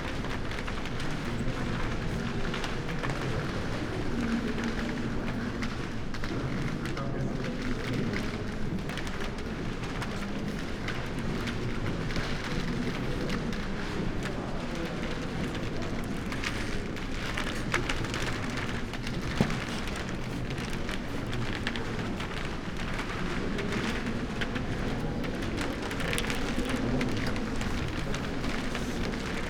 Kunsthistorisches Museum, Wien - parket, first floor, left wing